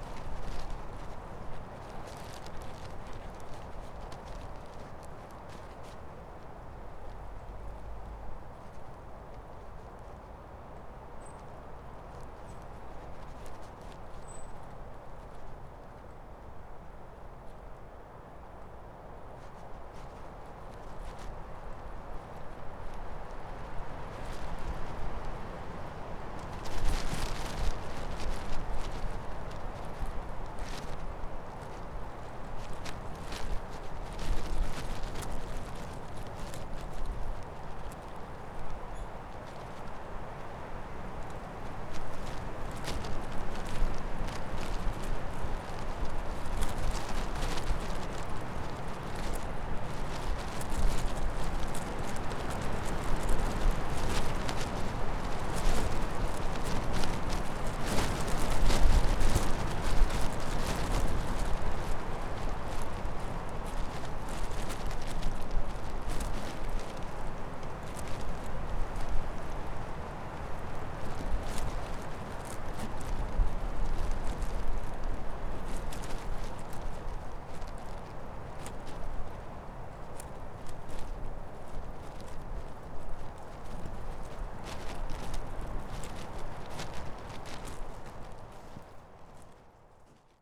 Lithuania, Utena, plastic in wind
some plastic package partly frozen in snow
Utenos apskritis, Lietuva, European Union, 16 March